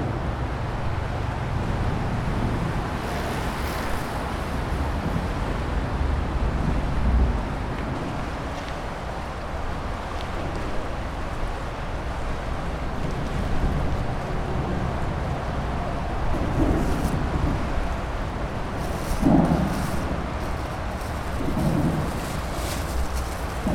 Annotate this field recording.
Sur la piste cyclable qui mène à Chambéry, l'Avenue Verte arrêt pour écouter ce qui se passe sous le pont de l'A41 et tester l'acoustique.